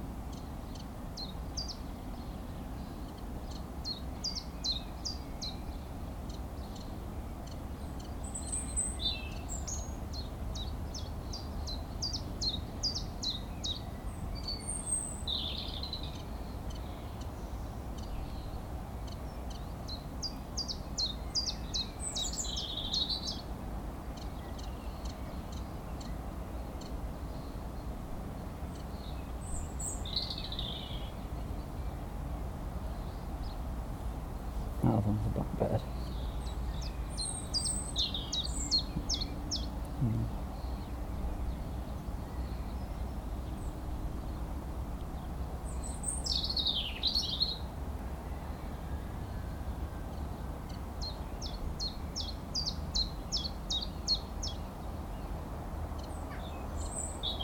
Middle Farm, Firle, East Sussex, UK - After The Picnic
After enjoying a picnic with friends in field just behind middle farm, we lay out on the grass in the late spring sunshine trying to distinguish bird calls.